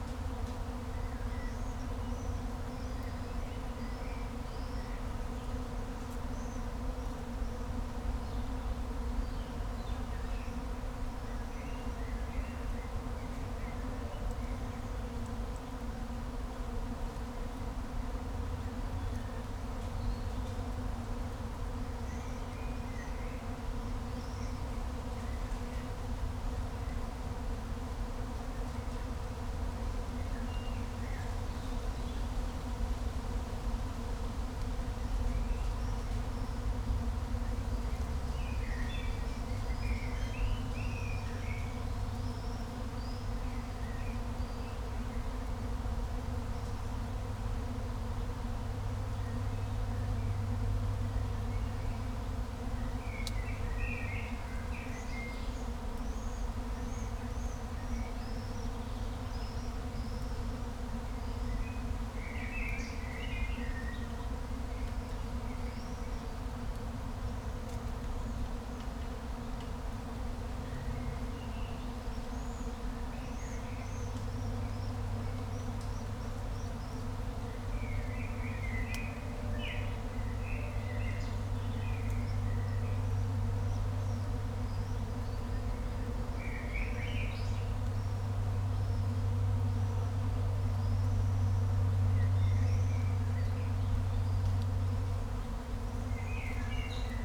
intense and amazing humming in the trees, probably caused by bees, must be thousands, couldn't see them though.
(Sony PCM D50, Primo EM172)